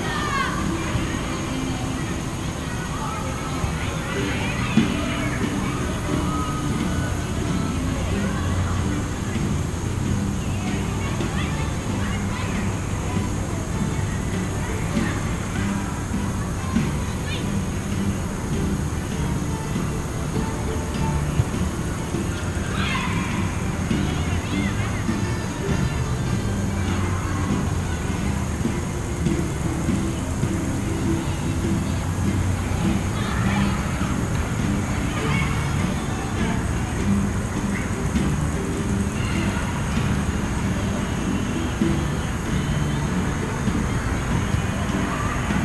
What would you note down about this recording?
Folk jam competes with sounds from soccer practice and traffic as heard from park bench.